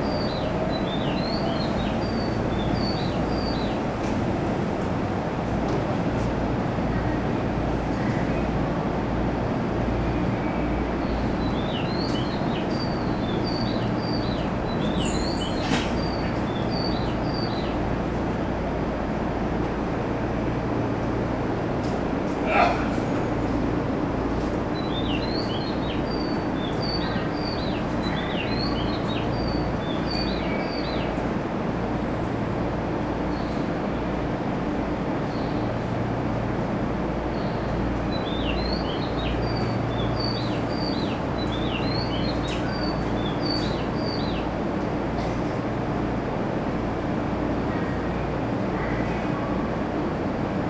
Hikifune train station, platform - birds from speakers
platform on the train station. train idling, announcement, bell indicating train arrival, bird chirps played from speakers (yep, these are not real birds), door closes, train departing. (roland r-07)